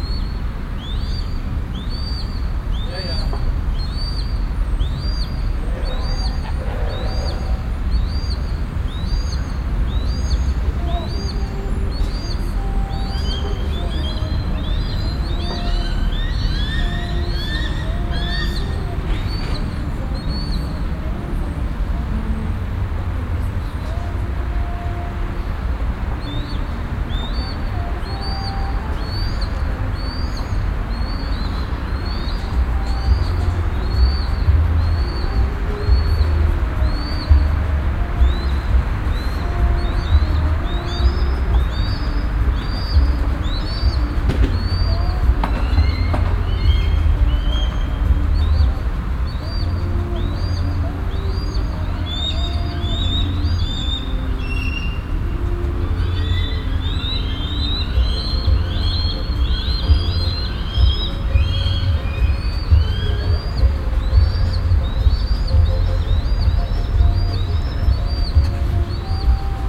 cologne, rheinauufer, at the malakow tower
sonntäglicher kaffeebetrieb, seevögel und der verkehr der rheinuferstrasse
soundmap nrw: social ambiences/ listen to the people - in & outdoor nearfield recordings